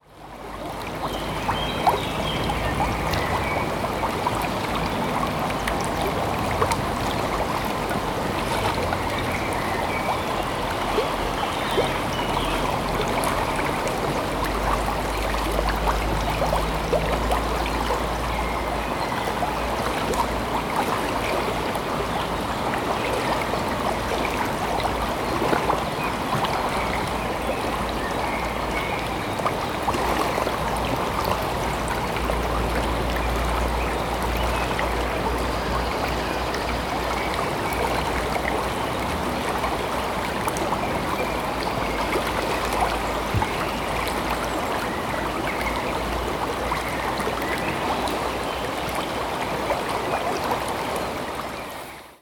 registrazione effettuata sulla sponda del fiume Candigliano con uno Zoom H2N in modalità MS